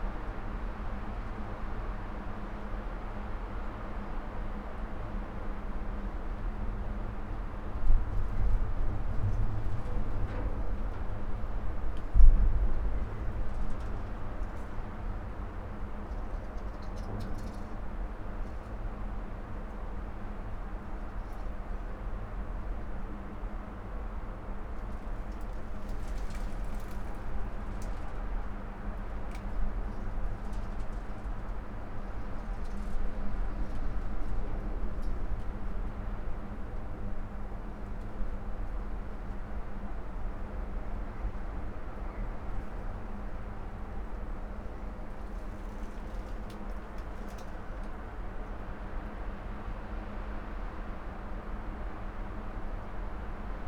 quarry, metal shed, Marušići, Croatia - void voices - stony chambers of exploitation - metal shed
wind bora, plastic bottles